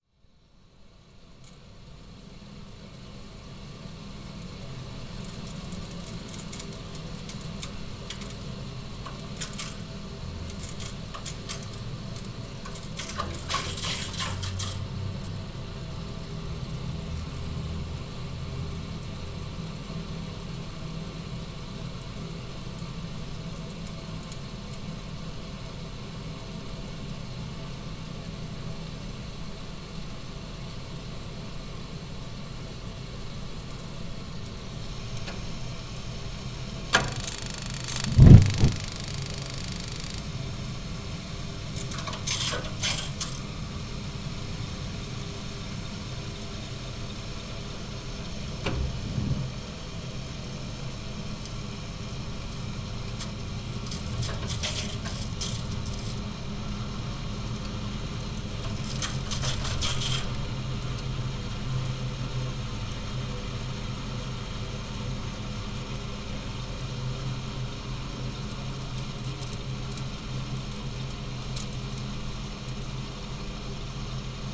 Poznan, Jezyce district, at the office, bathroom - water heater rumble
big water heater at the office, rumble in the water and gas pipes, zoom h4n